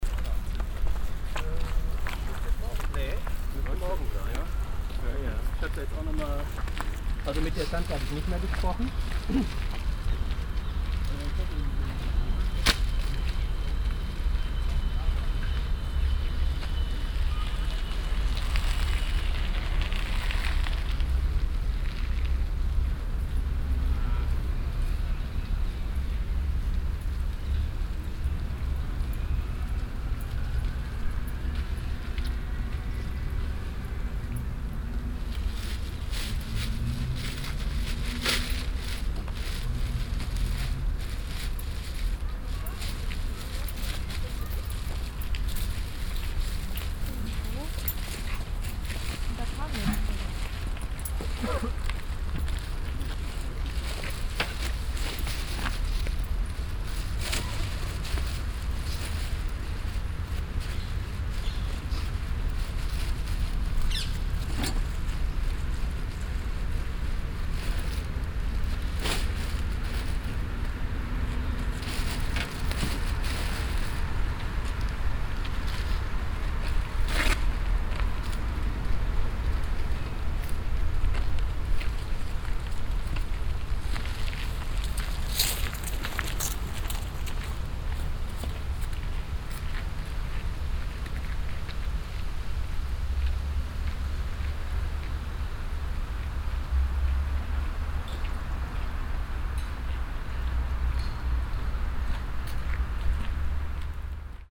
cologne, stadtgarten, park, weg strassenseite
stereofeldaufnahmen im september 07 mittags
project: klang raum garten/ sound in public spaces - in & outdoor nearfield recordings